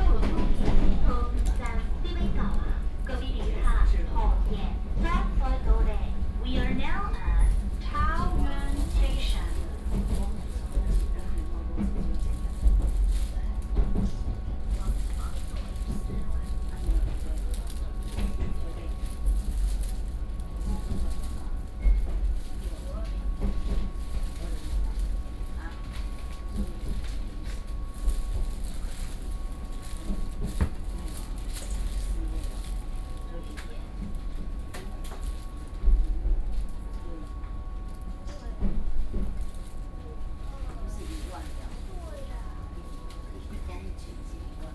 Taoyuan, Taiwan - inside the Trains
inside the Trains, Sony PCM D50 + Soundman OKM II
桃園縣 (Taoyuan County), 中華民國